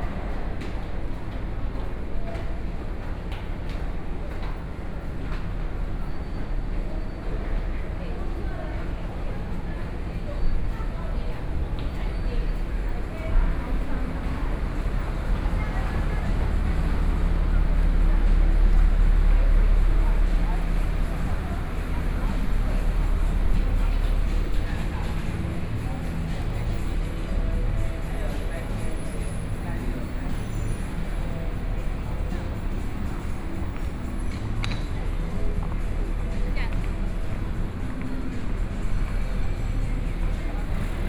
Taoyuan Station - Underpass
walking in the Underpass, Zoom H4n + Soundman OKM II